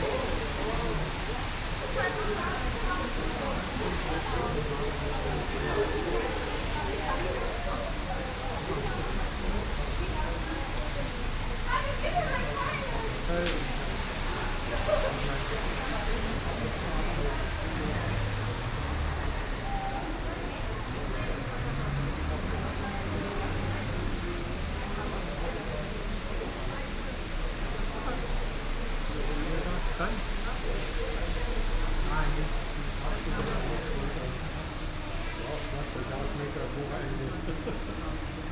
Löhrrondell, Bus Station, Koblenz, Deutschland - Löhrrondell 8
Binaural recording of the square. Eight of several recordings to describe the square acoustically. People on the phone or talking, waiting for the bus on a friday afternoon .